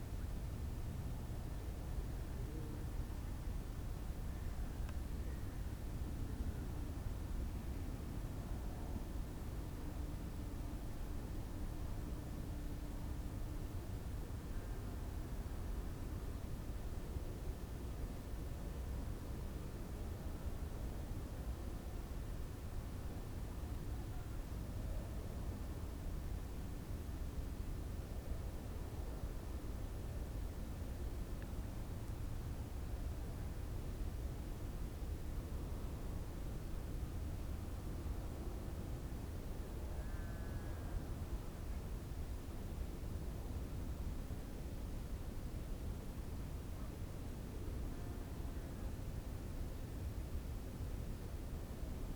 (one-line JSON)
{"title": "klein zicker: ehemalige sowjetische militärbasis - the city, the country & me: former soviet military base", "date": "2013-03-06 16:26:00", "description": "cold winter day, quiet ambience of the former soviet military base\nthe city, the country & me: march 6, 2013", "latitude": "54.28", "longitude": "13.69", "altitude": "10", "timezone": "Europe/Berlin"}